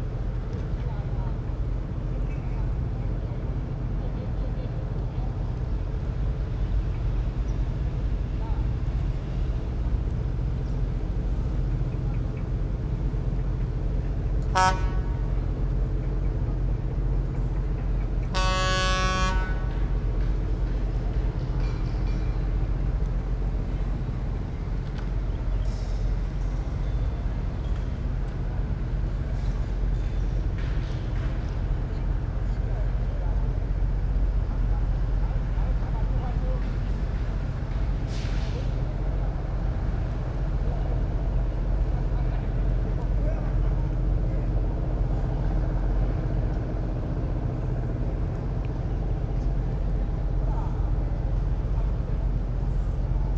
Gushan, Kaohsiung - Port next to the park